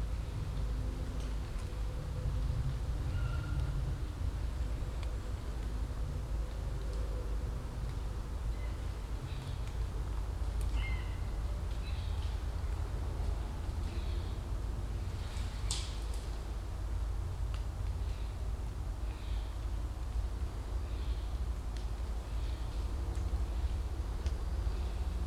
Sommer Morgen in einem Laubwald. Vogelstimmen, ein Flugzeug überfliegt die Region und im Gestrüpp bewegt sich vorsichtig und kurz aufgeschreckt ein Reh.
On a summer morning in a broadleaf forest. Bird whistles, a plane crossing the region and in the bushes the careful movements of a deer.